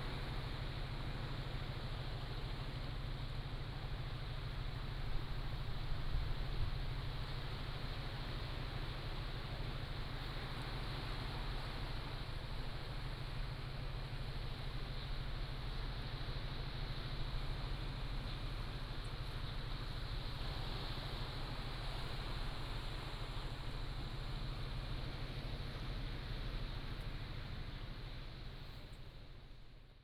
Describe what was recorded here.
Sound of the waves, Small fishing village, In front of the temple, Facing the sea